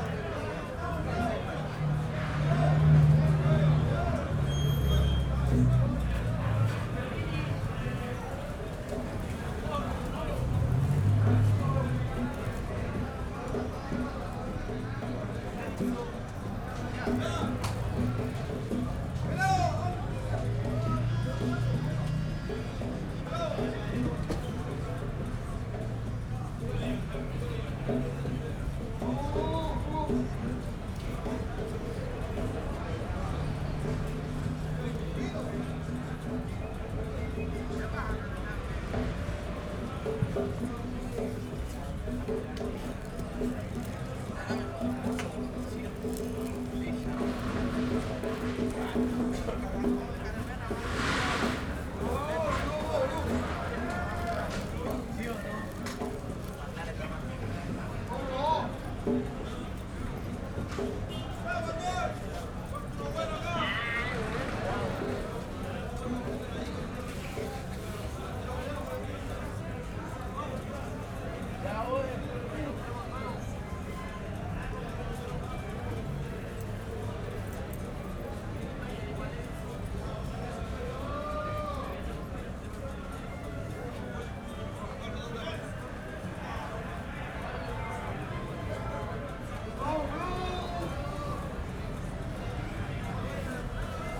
Plazuela Ecuador, Valparaíso, Chile - evening ambience
crowded and busy atmosphere at Plazuela Ecuador: people at the taxi stand, in bars, at the fruit sellers, passers-by
(SD702, DPA4060)
Valparaíso, Región de Valparaíso, Chile, 25 November